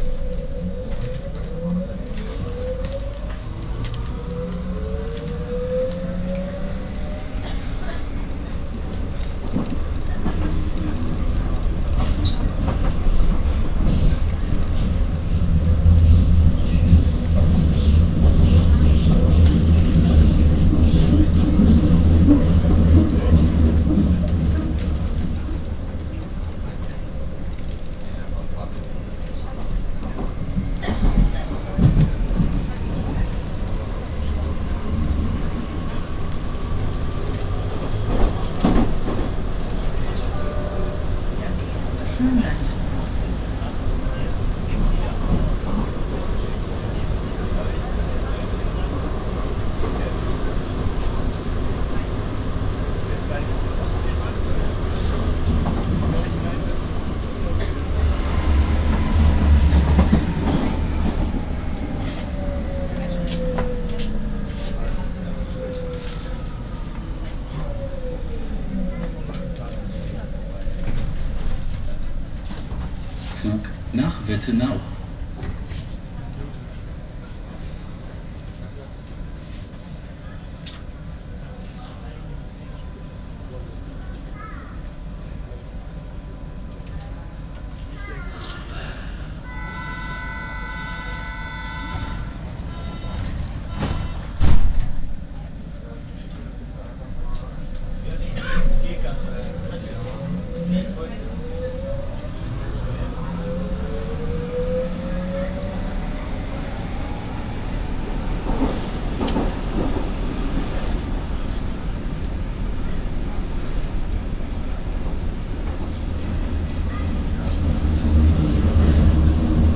The whole U-Bahn trip from Rathaus Neuköln to Alexanderplatz. Binaural recording.
Germany